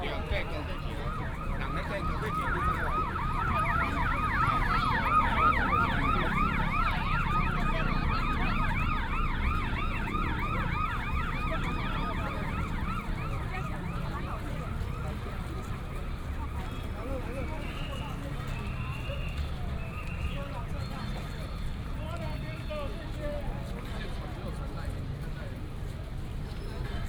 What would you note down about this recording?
Baishatun Matsu Pilgrimage Procession, A lot of people, Directing traffic, Whistle sound, Footsteps